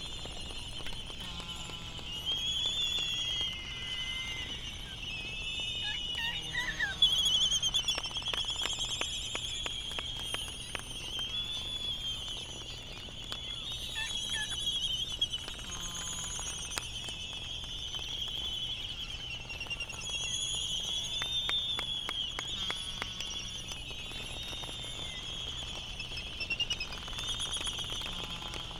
Laysan albatross soundscape ... Sand Island ... Midway Atoll ... laysan calls and bill clapperings ... warm ... slightly blustery morning ...